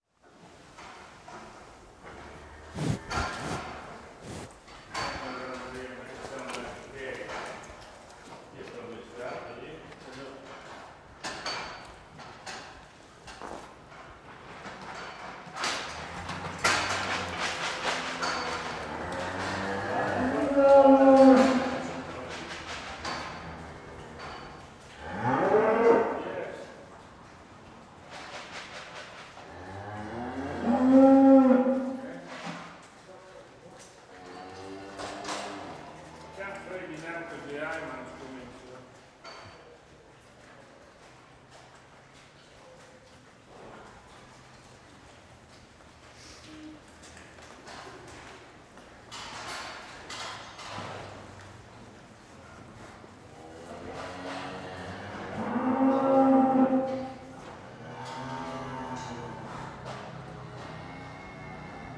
Penrith, UK - Farm noises
In the sheds of a large dairy and sheep farm.
North West England, England, United Kingdom, 17 May, 10:51